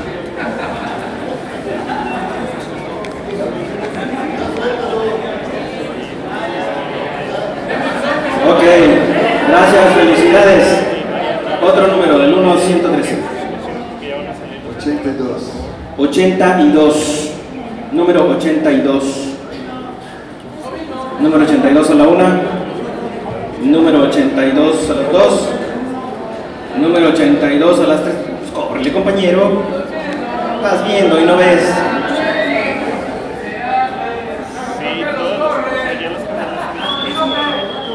Granjas México, Ciudad de México, D.F., Mexico - Fittipaldi Press Conference